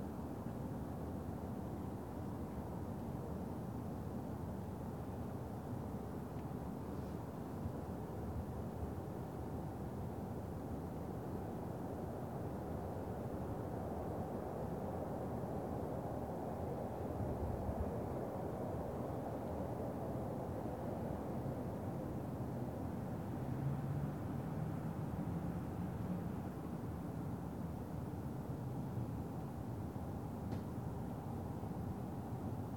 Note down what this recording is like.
I didn't know that the two churches across the street do not chime their bells at midnight. No I do, after recording during the most silent Friday night I have ever witnessed in my neighbourhood (it's been 12 years). On a Sony PCM D-100